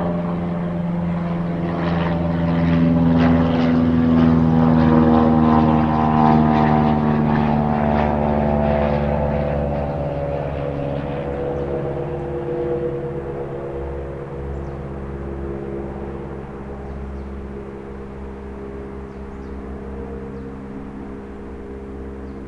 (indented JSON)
{
  "title": "Lithuania, Atkociskes, a plane and soundscape",
  "date": "2012-10-24 14:15:00",
  "description": "small plane entering autumnal soundscape",
  "latitude": "55.52",
  "longitude": "25.57",
  "altitude": "110",
  "timezone": "Europe/Vilnius"
}